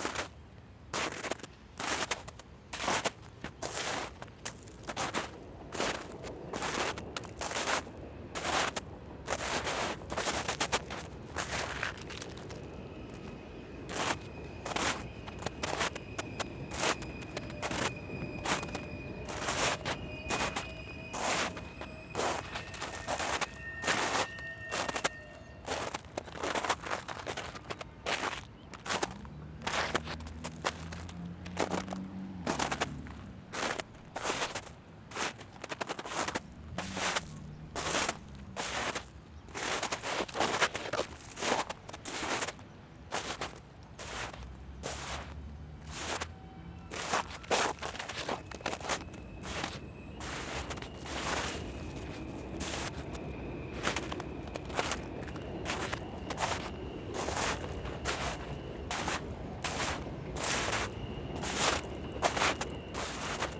Walking on a blanket of snow and ice at Rosemary's Playground.
United States, 3 February